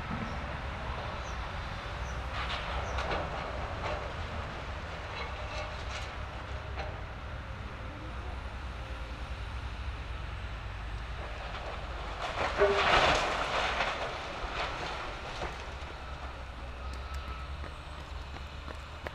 Poznan, Ogrody district, near Rusalka lake - excavator versus old building
an excavator demolishing an old building, knocking over the walls with its bucket and crushing the rubble with its tracks.
Poznan, Poland